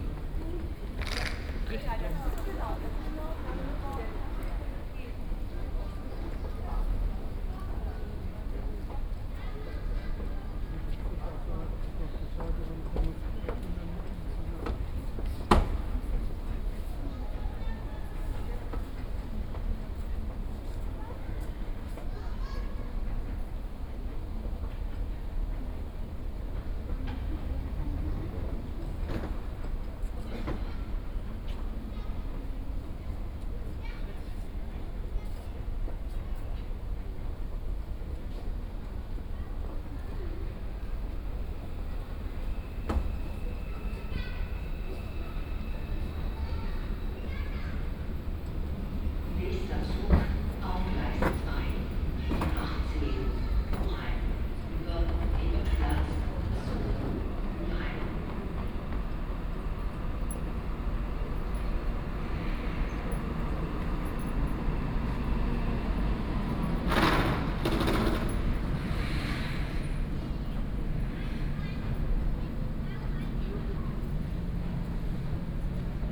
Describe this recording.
station ambience at platform, 3rd level below ground. (Sony PCM D50, OKM2)